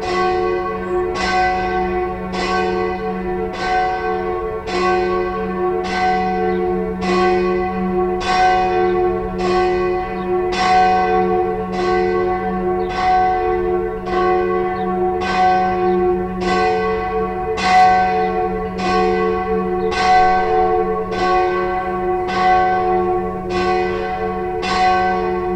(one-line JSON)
{"title": "Venice, Italy - campane-glocken-bells", "date": "2012-04-04 11:58:00", "description": "mittagsglocken am dorsoduro/ campane di mezziogiorna a dorsoduro / bells at noon", "latitude": "45.43", "longitude": "12.32", "altitude": "5", "timezone": "Europe/Rome"}